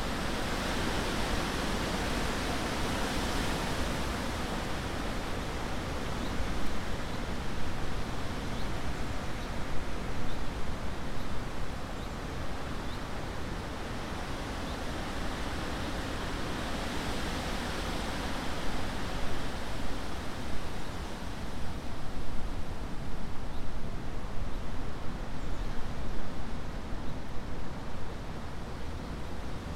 Linford Manor Park, Great Linford, Milton Keynes, UK - Windy day
Tascam DR05 placed on a bench, upwards towards the tall trees by the lake. Very windy day (dead kitten). At the end you can hear a plane approaching Luton.